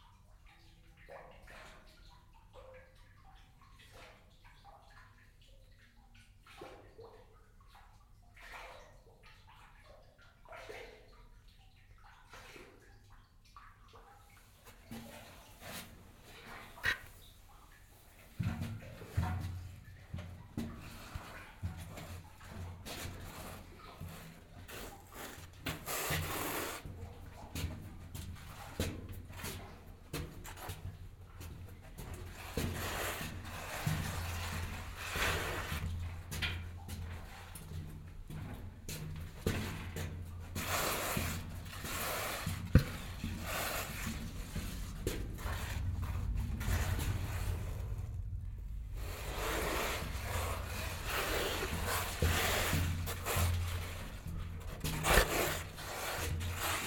The city museum of Aarau made some parts of the tunnels accessible for the public. Here you here a walk through the narrow tunnel.
Aarau, Switzerland, 14 March 2016, 14:00